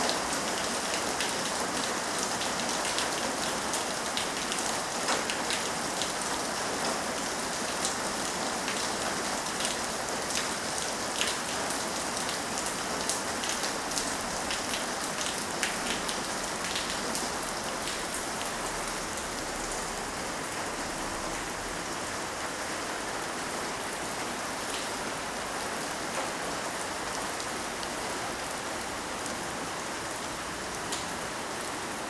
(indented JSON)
{
  "title": "Via Bossi, Pavia, Italy - Heavy rain",
  "date": "2012-10-27 21:05:00",
  "description": "Exit from the house: heavy rain in the courtyard. sounds of water on the stones, gutter, shelter. Then enter in the house again.",
  "latitude": "45.18",
  "longitude": "9.16",
  "altitude": "75",
  "timezone": "Europe/Rome"
}